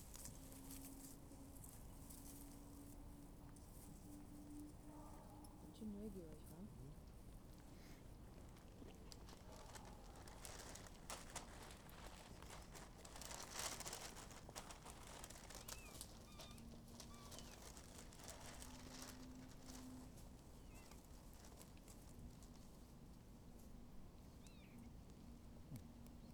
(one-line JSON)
{"title": "Berlin Wall of Sound, at former American Military Training Area 080909", "latitude": "52.40", "longitude": "13.31", "altitude": "44", "timezone": "Europe/Berlin"}